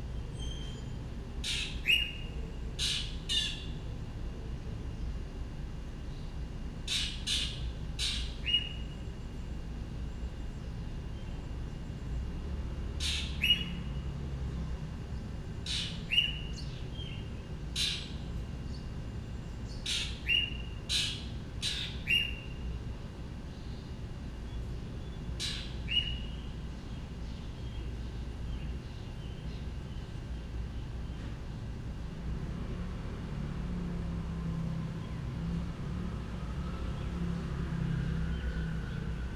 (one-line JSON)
{"title": "No., Fuqun Street, Xiangshan District, Hsinchu City, Taiwan - Summer Sunrise", "date": "2019-07-21 05:18:00", "description": "At daybreak, birds call from various distances away, within the Fuqun Gardens community. Recorded from the front porch. Stereo mics (Audiotalaia-Primo ECM 172), recorded via Olympus LS-10.", "latitude": "24.77", "longitude": "120.96", "altitude": "72", "timezone": "Asia/Taipei"}